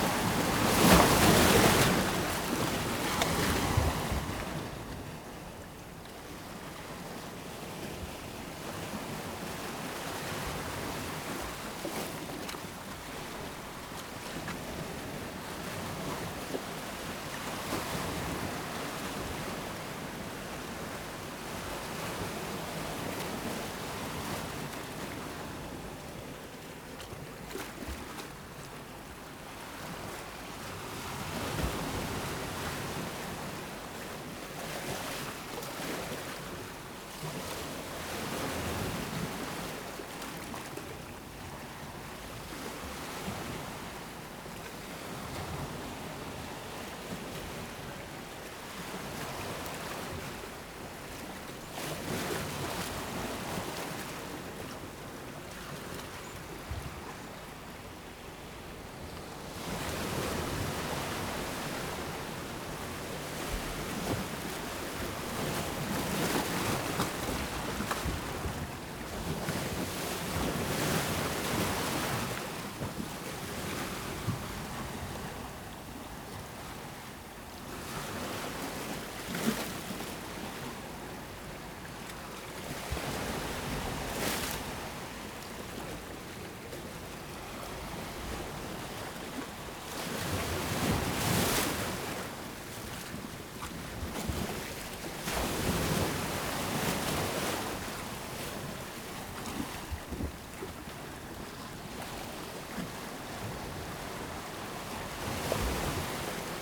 Whitby, UK - Rising tide ...
Incoming tide ... open lavaliers on t bar fastened to fishing landing net pole ...